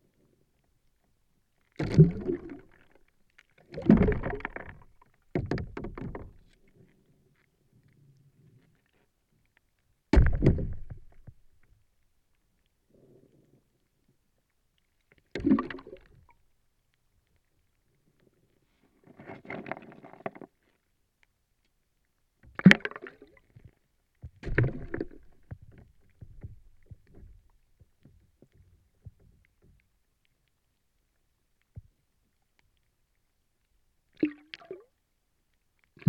Filotas, Greece - Frozen water
-A soundscape
-A photo of the place
-Write the exact location and some infos about you
And we will post it ASAP
Περιφέρεια Δυτικής Μακεδονίας, Αποκεντρωμένη Διοίκηση Ηπείρου - Δυτικής Μακεδονίας, Ελλάς, 22 January